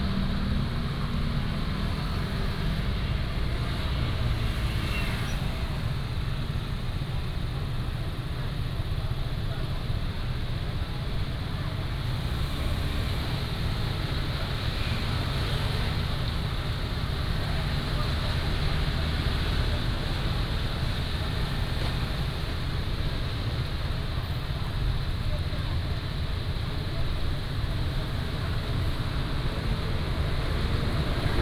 Zhonghua Rd., Magong City - Traffic Sound
Traffic Sound, In the side of the road